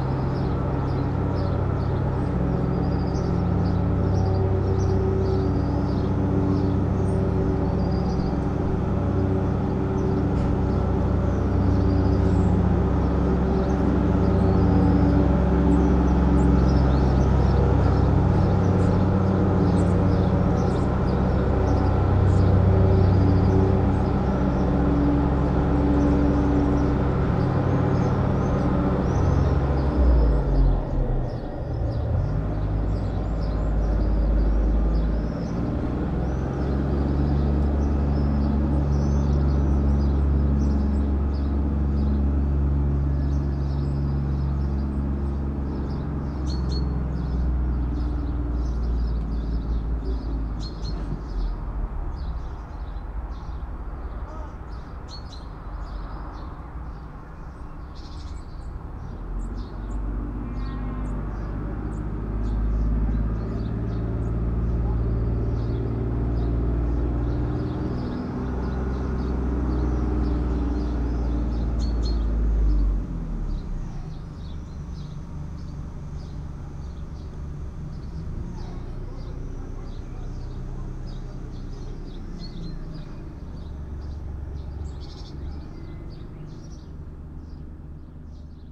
at exactly 10am on a friday morning in summer the neighborhood fills with the sounds of lawnmowers.
Maribor, Slovenia, 15 June 2012, 10:32